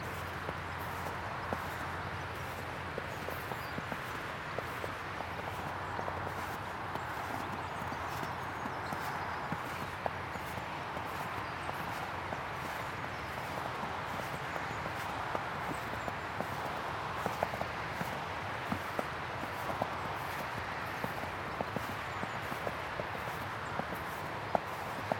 Contención Island Day 4 inner southwest - Walking to the sounds of Contención Island Day 4 Friday January 8th
The Drive Moor Crescent Duke’s Moor Westfield Oaklands Oaklands Avenue Woodlands The Drive
Snow falling
The moor frozen
churned to sculpted mud at the gate
humans as cattle
Walking through snow
step across wet channels
that head down to the burn
stand inside an ivy tree
surrounded by dripping